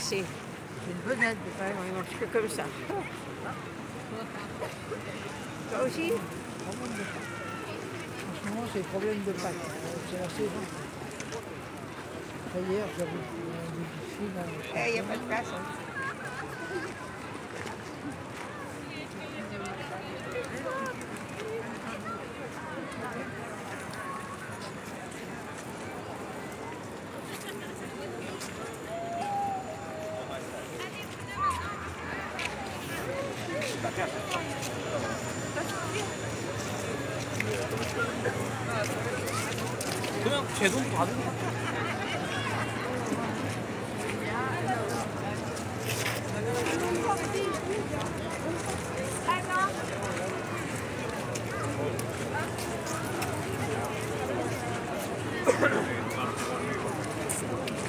Paris, France - Tourists in front of the cathedral
A lot of tourists waiting in front of the Notre-Dame cathedral, some people giving food to the doves, a few people joking.
January 2019